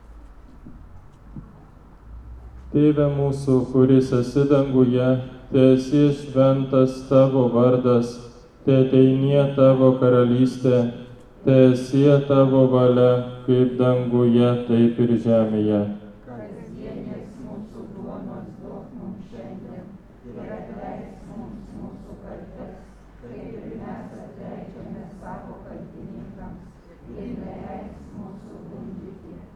Utena, Lithuania, procession in churchyard